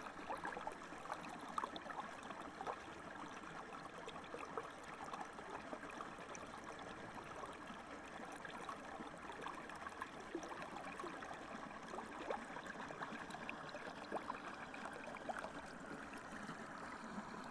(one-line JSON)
{"title": "Minnehaha Avenue, Takapuna, Auckland, New Zealand - On the edge of lake and sea", "date": "2020-08-26 17:30:00", "description": "Moving through various sonic fields between the Pupuke Lake out-flow and lava and the sea", "latitude": "-36.78", "longitude": "174.78", "altitude": "7", "timezone": "Pacific/Auckland"}